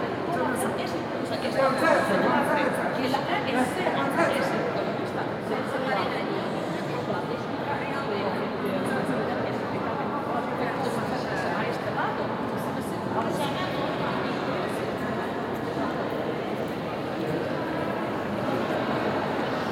Ermitage, Sankt-Peterburg, Rosja - (622) BI Visitors at Ermitage
Binaural recording of walk thourgh I suppose the biggest room of Ermitage, with huge reverb and multiple languages reflections.
Sony PCM-D100, Soundman OKM
Северо-Западный федеральный округ, Россия, 7 September 2019